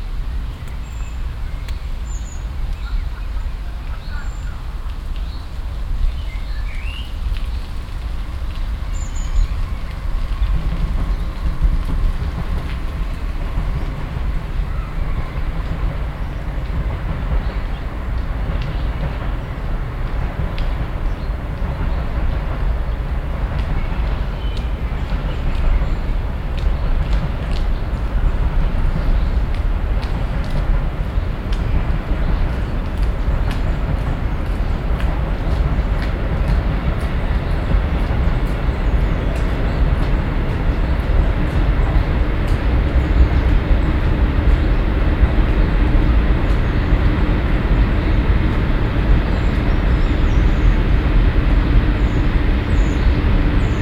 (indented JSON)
{
  "title": "cologne, stadtgarten, unter Hasel Baum, nachmittags - cologne, stadtgarten, unter hasel baum, nachmittags",
  "date": "2008-06-12 16:57:00",
  "description": "unter haselbaum nahe weg stehend - stereofeldaufnahmen im juni 08 - nachmittags\nproject: klang raum garten/ sound in public spaces - in & outdoor nearfield recordings",
  "latitude": "50.94",
  "longitude": "6.94",
  "altitude": "51",
  "timezone": "Europe/Berlin"
}